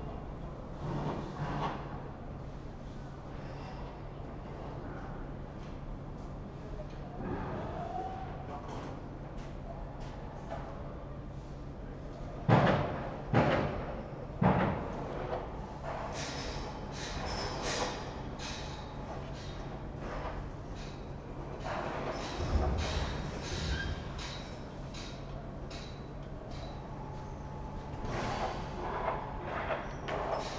Lisbon, Travessa do Forno do Torel - street ambience
noon break is over, nearby contruction work starts, echoes of tools and voices, street and station ambience